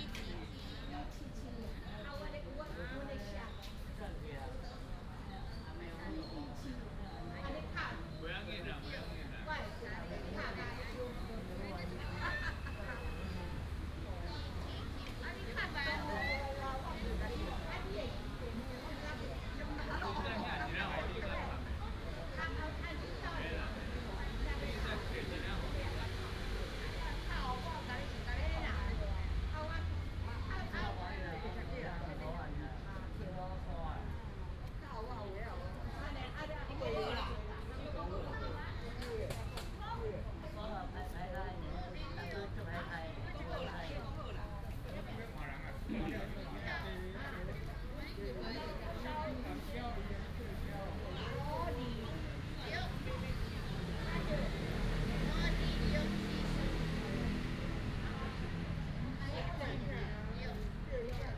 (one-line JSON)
{"title": "西雅里, Hsinchu City - A lot of old people in the park", "date": "2017-10-06 15:59:00", "description": "old people playing chess, A lot of old people in the park, fighter, traffic sound, birds sound, Binaural recordings, Sony PCM D100+ Soundman OKM II", "latitude": "24.80", "longitude": "120.95", "altitude": "19", "timezone": "Asia/Taipei"}